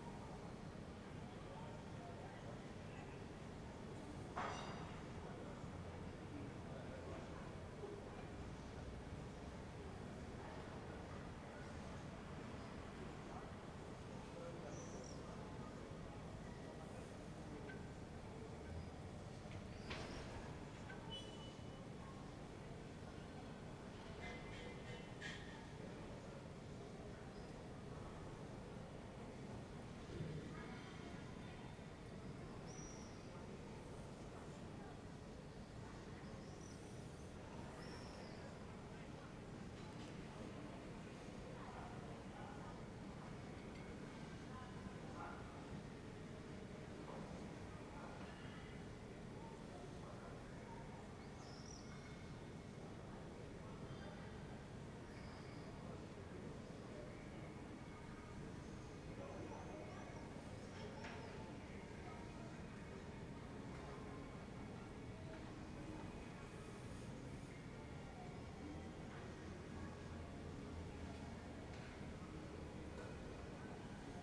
Ascolto il tuo cuore, città. I listen to your heart, city. Several chapters **SCROLL DOWN FOR ALL RECORDINGS** - Wednesday evening with birds and swallows in the time of COVID19 Soundscape
"Wednesday evening with birds and swallows in the time of COVID19" Soundscape
Chapter LXXXIX of Ascolto il tuo cuore, città, I listen to your heart, city.
Wednesday, May 27th 2020. Fixed position on an internal terrace at San Salvario district Turin, seventy-eight days after (but day twenty-four of Phase II and day eleven of Phase IIB and day five of Phase IIC) of emergency disposition due to the epidemic of COVID19.
Start at 8:05 p.m. end at 8:52 p.m. duration of recording 46’38”
Torino, Piemonte, Italia, 27 May 2020, 20:05